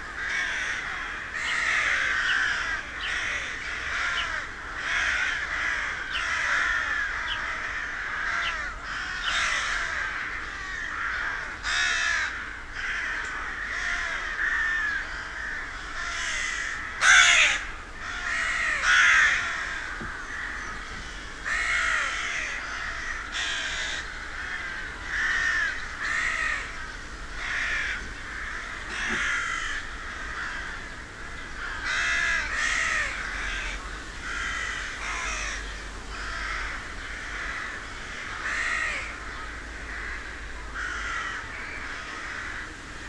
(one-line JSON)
{"title": "Kelmė, Lithuania, local crows", "date": "2019-06-12 12:30:00", "description": "every town has its local crows gathering....", "latitude": "55.64", "longitude": "22.94", "altitude": "121", "timezone": "Europe/Vilnius"}